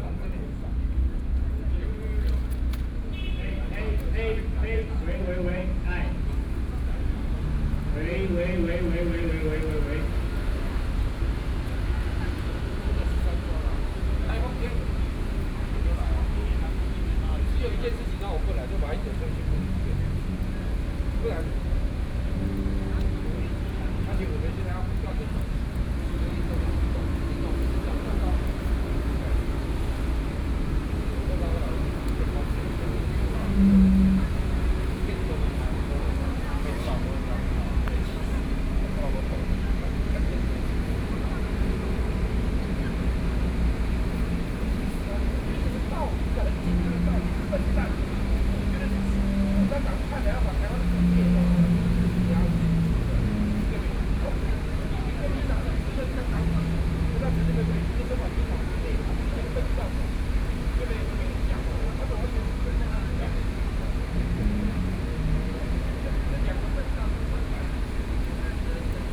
Chiang Kai-Shek Memorial Hall - Sound Test
Sound Test, Sony PCM D50 + Soundman OKM II